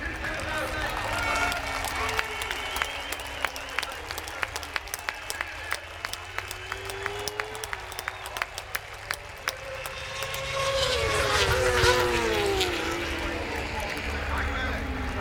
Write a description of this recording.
moto2 race 2013 ... lavalier mics ...